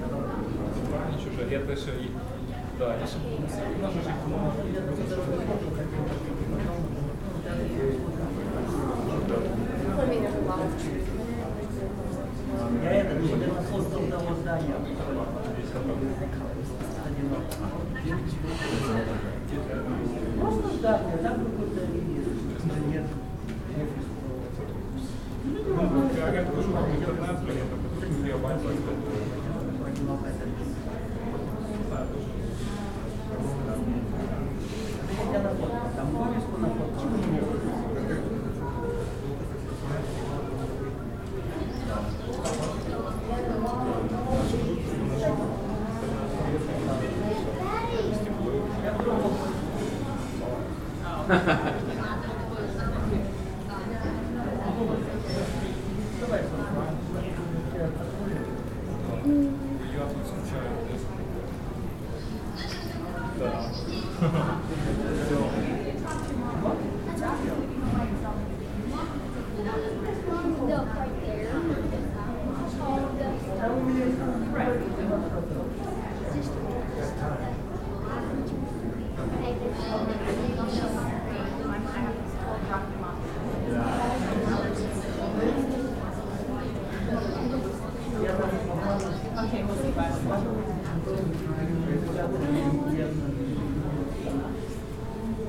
A recording of the Native American section of the Denver Art Museum